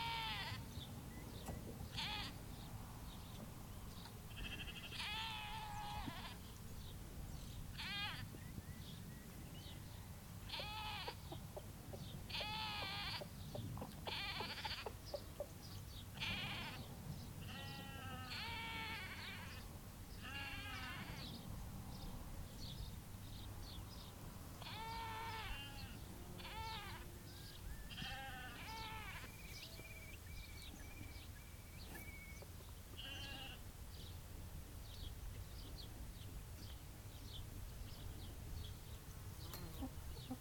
Burland Croft Trail, Trondra, Shetland Islands, UK - Lamb and sheep calling to each other, with chickens clucking
This is a recording featuring lambs, a sheep and some chickens that live on the Burland Croft Trail; an amazing place run by Tommy and Mary Isbister. Tommy and Mary have been in Trondra since 1976, working and developing their crofts in a traditional way. Their main aim is to maintain native Shetland breeds of animals, poultry and crops, and to work with these animals and the environment in the tried-and-tested ways that sustained countless generations of Shetlanders in the past. The Burland Croft Trail is open all summer, and Mary and Tommy were incredibly helpful when I visited them, showing me around and introducing me to all their animals and also showing me some of the amazing knitwear produced by both Mary, Tommy and Mary's mothers, and their daughter, showing three generations of knitting and textile skill within one family.